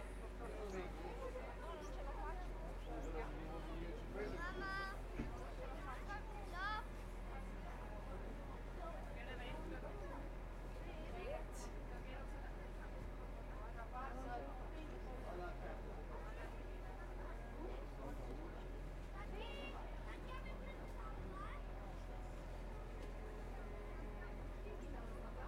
Bergstation Großer Arber, Bayerisch Eisenstein, Deutschland - Ausgang Bergstation Große Arber
Winterstimmung am Großen Arber.